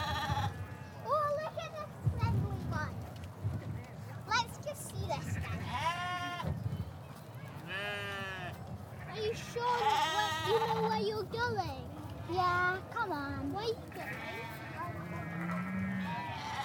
This is the sound of the shetland sheep at the Voe Show in Shetland. Like most of Shetland, this is a treeless region, so there is a lot of wind. Although this makes for a blowy recording, it also means that you can hear rosette ribbons won by different sheep fluttering in the breeze! The sheep are all in pens, and are grouped together as rams, ewes, and lambs. There are three main prizes in each category. Shetland sheep are the backbone of the Shetland wool industry, and - judging by the beautifully stacked and very desirable fleeces just a small distance away in the wool tent - most of the animals in this recording will have their fleeces counted amongst the Shetland wool clip.